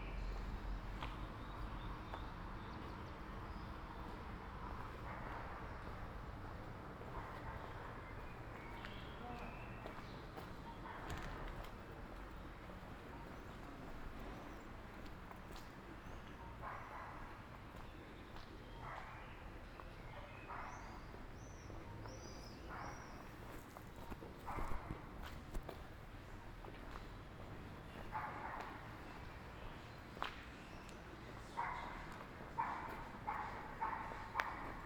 Ascolto il tuo cuore, città. I listen to your heart, city. Several chapters **SCROLL DOWN FOR ALL RECORDINGS** - Round Noon bells on Sunday in the time of COVID19 Soundwalk
"Round Noon bells on Sunday April 26 in the time of COVID19" Soundwalk
Chapter LVII of Ascolto il tuo cuore, città. I listen to your heart, city
Sunday April 26th 2020. San Salvario district Turin, walking to Corso Vittorio Emanuele II and back, forty seven days after emergency disposition due to the epidemic of COVID19.
Start at 11:55 a.m. end at 00:18 p.m. duration of recording 22'30''
The entire path is associated with a synchronized GPS track recorded in the (kmz, kml, gpx) files downloadable here:
Piemonte, Italia, 26 April 2020